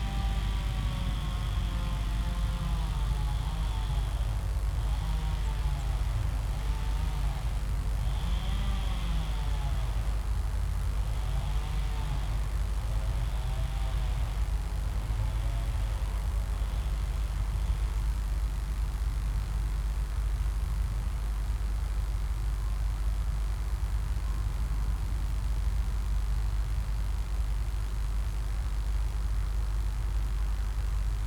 crackling high voltage power line near Limburg ICE train station
(Sony PCM D50, DPA4060)
Limburg, Germany, 29 October, 12:25